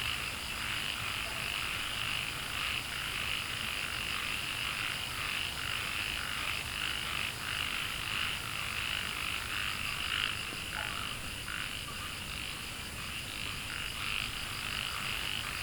Wetland Park, Frogs chirping, Brook, Dogs barking
Nantou County, Puli Township, 桃米巷11-3號, 10 August